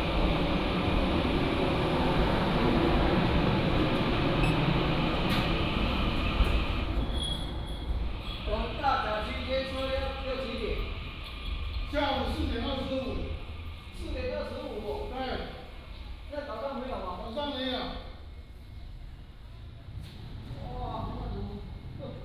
Tongluo Station, 苗栗縣銅鑼鄉 - walking in the Station
From the station platform to export direction